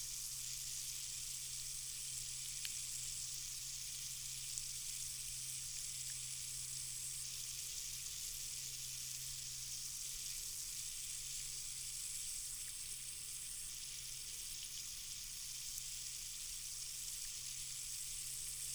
Malton, UK - water leaking from borehole ...
water leaking from borehole ... supplies to an irrigation system ... dpa 4060s in parabolic to mixpre3 ... spraying a potato crop ...